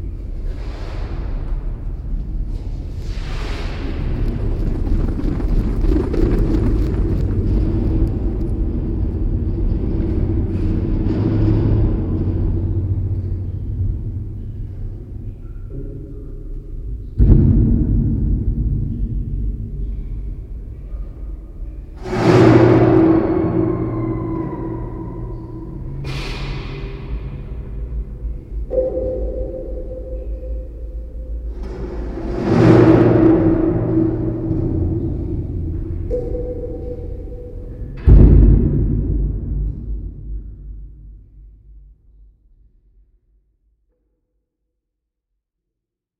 Sand pool catchers in Bubeneč
Improvisation during the workshop New maps of time with John Grzinich. Recording down at the undergrounds sand pools.
favourite sounds of prague
October 2010